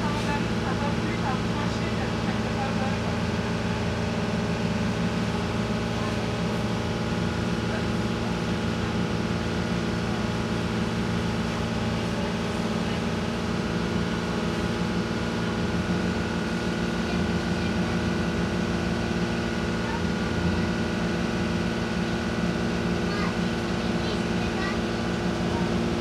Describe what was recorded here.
Public boat around de city of Geneva. In the winter and the roof its close. We can hear the motor only and some noises makes by the driver (chair, and automatic door). A little girl speak in french and talk about the boat. The trip is short across "La Rade", Zoom H1n